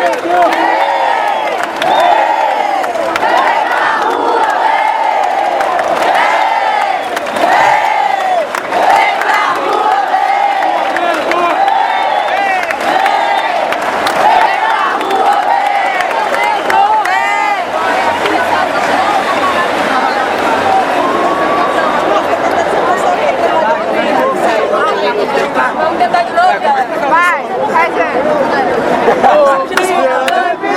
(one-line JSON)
{"title": "Av Rio Branco, Rio de Janeiro - RJ, República Federativa do Brasil - Protest on Rio Branco avenue in Rio de Janeiro", "date": "2013-06-17 18:20:00", "description": "More than 100,000 people protest at Rio Branco Avenue in Rio de Janeiro.\nThousands Gather for Protests in Brazil’s Largest Cities\nProtesters showed up by the thousands in Brazil’s largest cities on Monday night in a remarkable display of strength for an agitation that had begun with small protests against bus-fare increases, then evolved into a broader movement by groups and individuals irate over a range of issues including the country’s high cost of living and lavish new stadium projects.\nThe growing protests rank among the largest and most resonant since the nation’s military dictatorship ended in 1985, with demonstrators numbering into the tens of thousands gathered here in São Paulo, Brazil’s largest city, and other large protests unfolding in cities like Rio de Janeiro, Salvador, Curitiba, Belém and Brasília, the capital, where marchers made their way to the roof of Congress.", "latitude": "-22.91", "longitude": "-43.18", "altitude": "38", "timezone": "America/Sao_Paulo"}